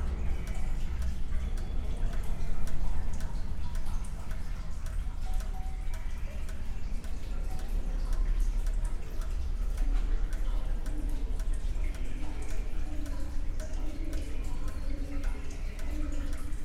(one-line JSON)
{"title": "Trekvlietplein, Den Haag, Netherlands - Trekvlietplein railway bridge after the rain", "date": "2022-05-23 20:35:00", "description": "Trekvlietplein railway bridge after the rain, Den Haag", "latitude": "52.07", "longitude": "4.33", "altitude": "3", "timezone": "Europe/Amsterdam"}